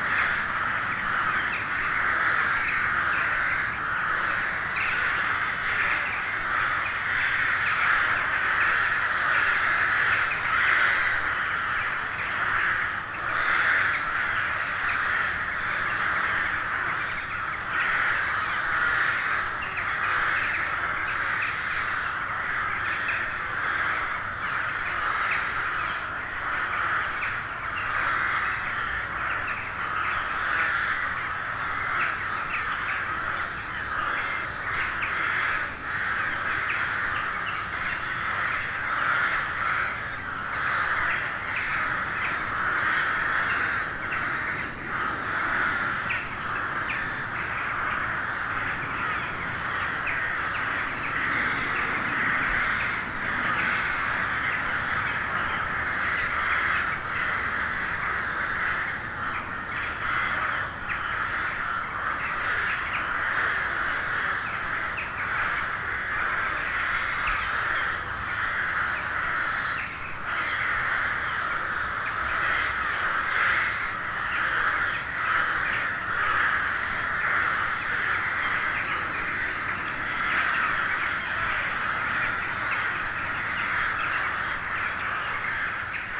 Neuflize - Corneilles
L'hiver, à la tombée du jour, des centaines de corneilles s'installent pour la nuit dans un bois de peupliers.